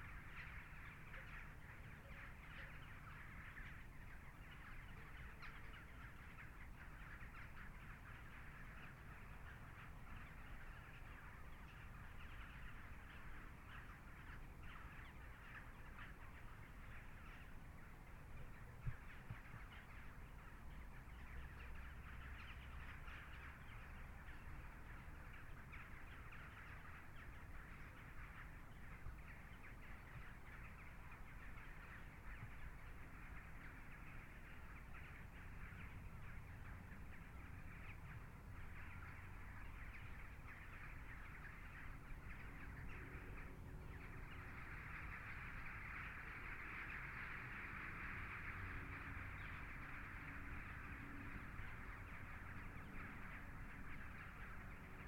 [Zoom H4n Pro] Sundown at the old airstrip in Oostakker/Lochristi. In the First World War, the Germans deposited tonnes of sand on the site to turn it into an airstrip. It was never used because the war ended, and now the sand is being mined. This created a large body of water, home to many birds.